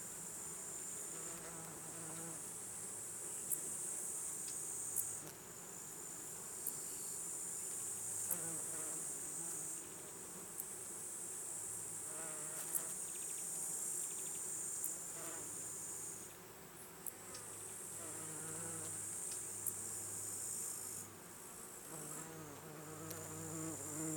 Kastna Tammik (oak grove)
small sounds around an ancient oak tree
18 July 2010, 15:02